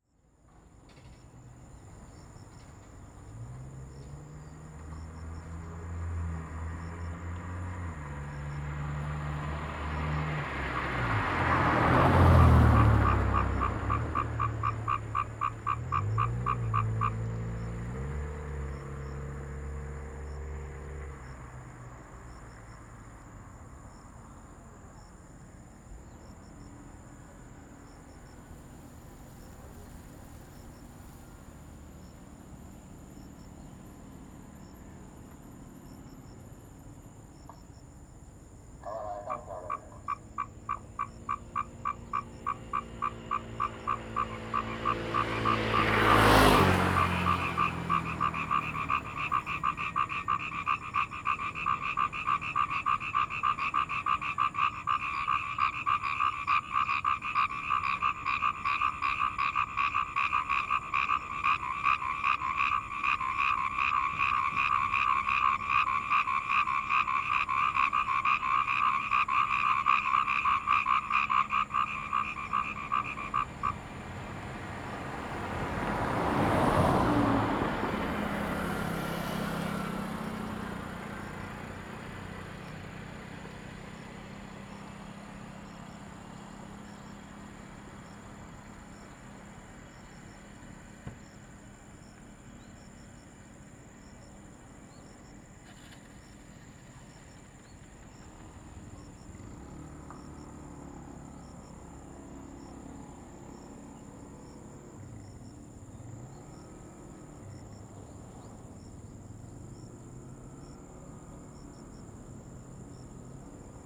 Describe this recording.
Frogs sound, Traffic Sound, Zoom H2n MS +XY